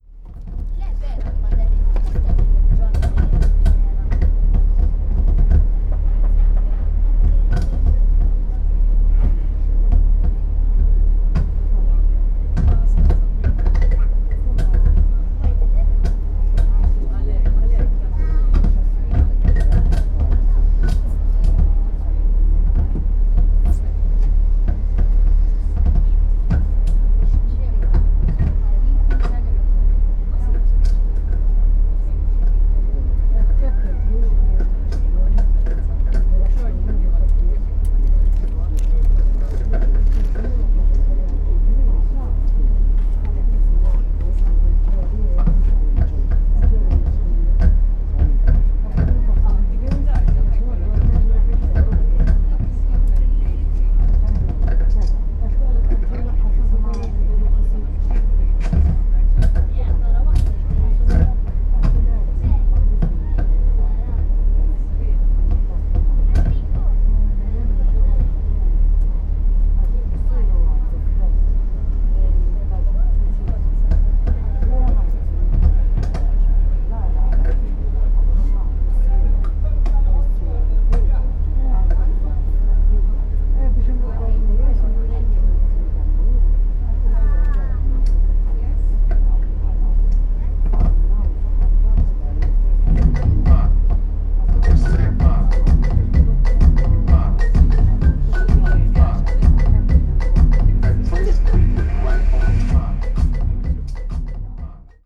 Bieb, Cospicua, Malta - ferry departure
waiting on a top deck of a ferry to get to a different part of island. ferry is rocking and moving about thus different parts of the boat make strange noises due to the body bending a little. A rather big container attached to the deck also made very distinct cluttering noises. But all of the sudden it turned out it's not the container but sound from the boat speakers was being chopped as the boat was low on power and probably not enough power was being delivered from its batteries. As soon as the boat operator turned on the engines these strange cluttering noises changes into techno music. it was pretty surprising. (roland r-07)